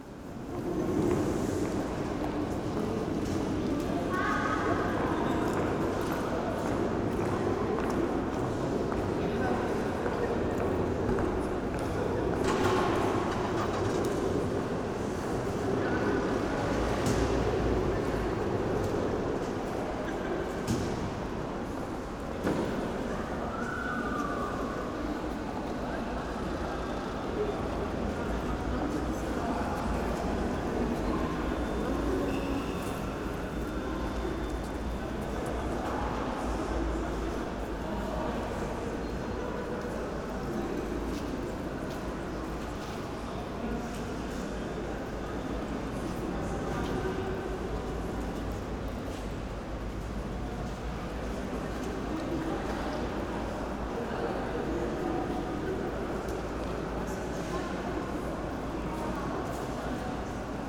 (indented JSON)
{
  "title": "Deutz, Köln, station - historic station hall",
  "date": "2012-03-10 18:00:00",
  "description": "Köln Deutz train station, historic station hall ambience\n(tech note: sony pcm d50, builtin mics 120°)",
  "latitude": "50.94",
  "longitude": "6.97",
  "altitude": "47",
  "timezone": "Europe/Berlin"
}